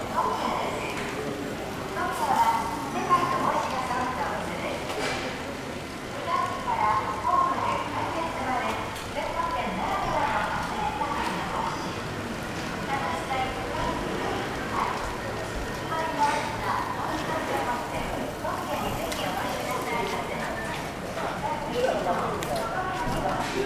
Japonia, Kyōto-fu, Kyōto-shi, Nakagyō-ku, Nishinokyō Ikenouchichō, アクセサリーいしかわ - shopping street
riding a bike along a roof covered market street. (roland r-07)